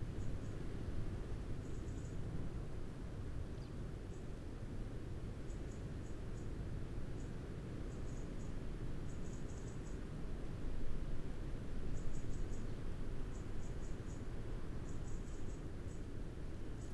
Green Ln, Malton, UK - pheasants leaving roost ...
pheasants leaving roost ... dpa 4060s in parabolic to MixPre3 ... bird calls from ... blackbird ... wren ... robin ... red-legged partridge ... crow ... redwing ... birds start leaving 12.25 ... ish ... much wind through trees ...
3 December 2020, 07:35